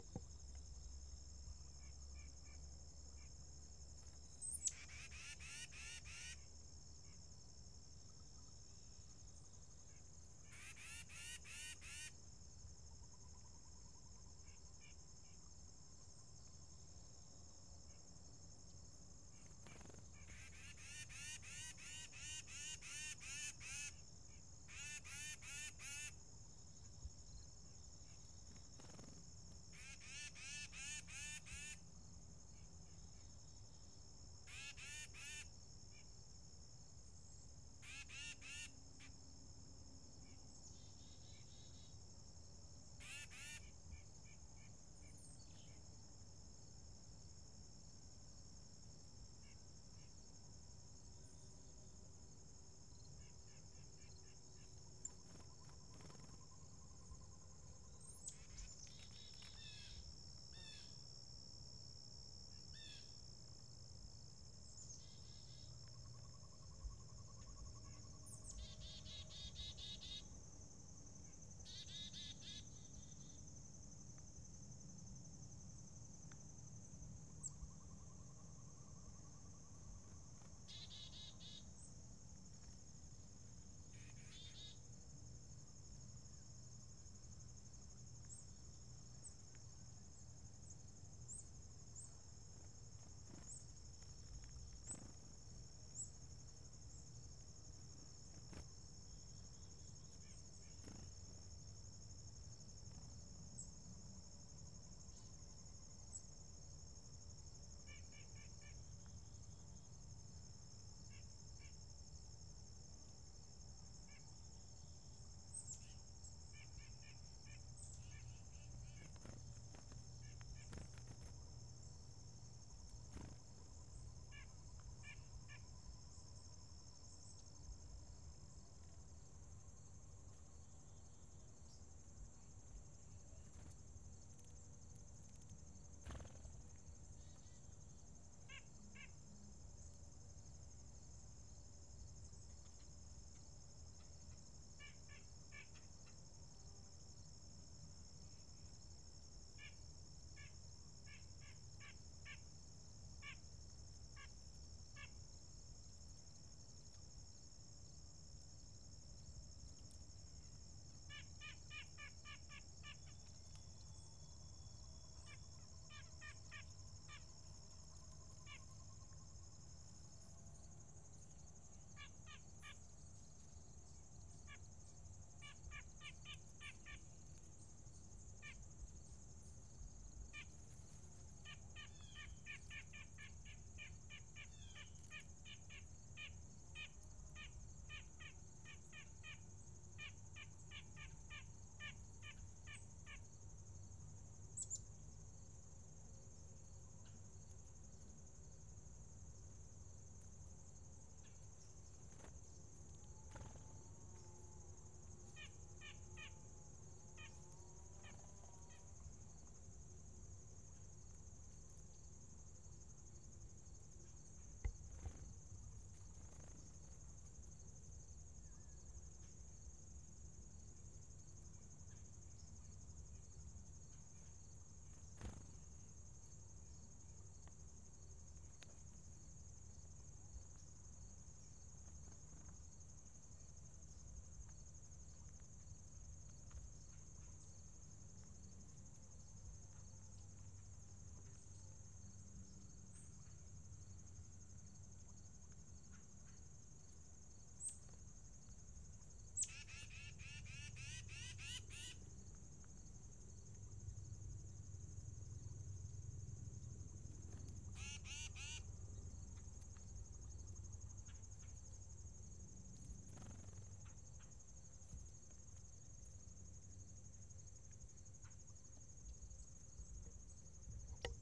Noyack, NY, USA - Feed the Birds
The birds are friendly, so I recorded them arriving and feeding out of my hands.